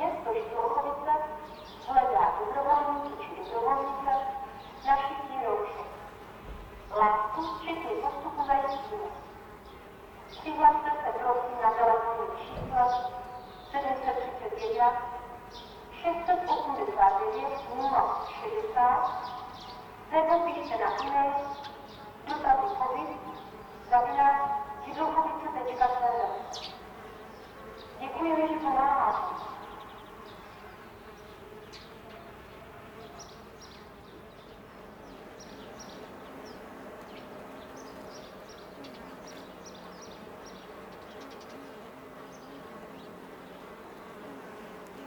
Alšova, Židlochovice, Czechia - City radio announcements in Židlochovice
City radio announcement. The mayor of the town speaks to seniors because of Covid 19. He offers help. There is also a challenge when the town of Židlochovice is looking for volunteers for sewing masks. Recorded in Židlochovice, South Moravia by Tomáš Šenkyřík
17 March 2020, 09:30